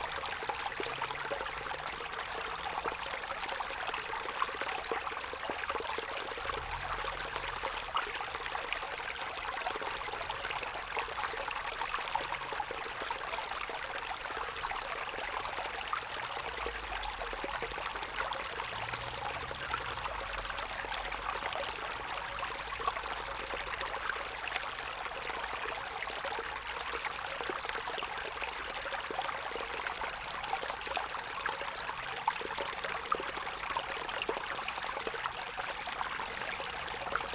wermelskirchen, aschenberg: sellscheider bach - the city, the country & me: creek
the city, the country & me: may 7, 2011
Wermelskirchen, Germany